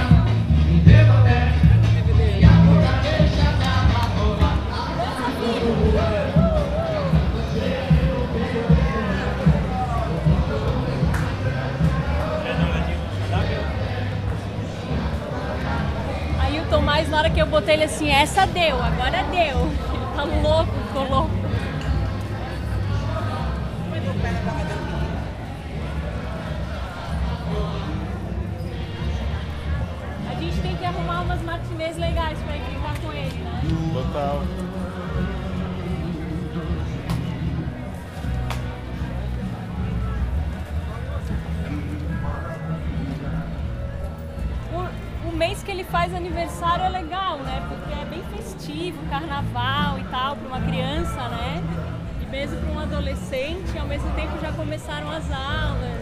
Carnival reharsal at the public market in Florianópolis, Brazil
Listining to the carnival warm up while buying some fresh squids.
Santa Catarina, Brasil